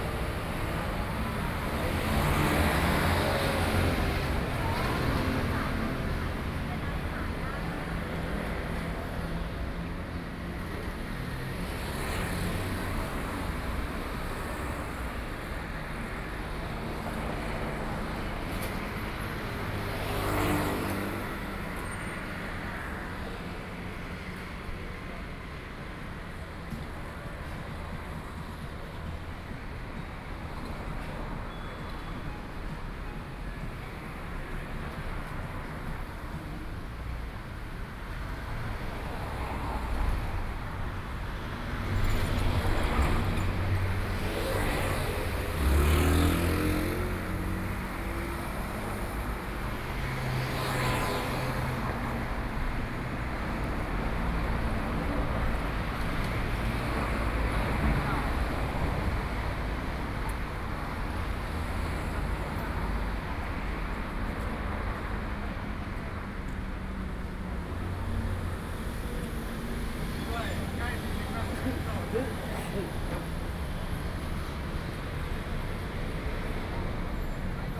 Puxin, Taoyuan - Intersection
in front of the Convenience store, traffic noise, Sony PCM D50+ Soundman OKM II
Yangmei City, Taoyuan County, Taiwan, 14 August 2013